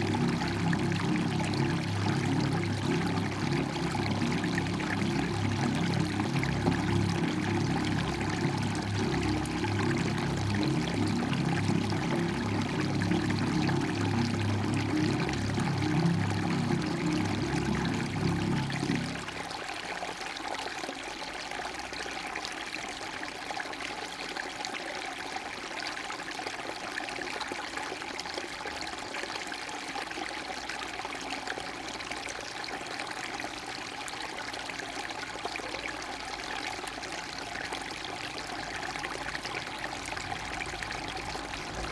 Lasocin, Pieszyce, Polska - water flow near street, resonance
a little stream coming down from the mountains, flowing along the street. A specific resonance is audible under dense vegetation, but suddenly stops.
(Sony PCM D50)
powiat dzierżoniowski, dolnośląskie, RP, 29 September 2019, 13:10